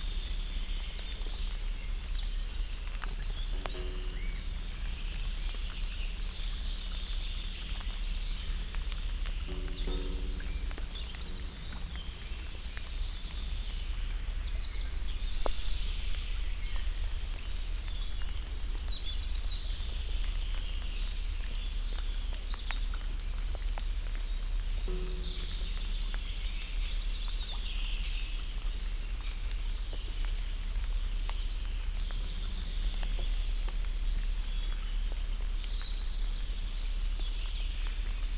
Morasko Nature Reserve, beaver pond - metal plate
(binaural) standing on a short, rickety pier extending into the pond. thick raindrops splash on the water surface. every once in a while a raindrop hits an information post on the right.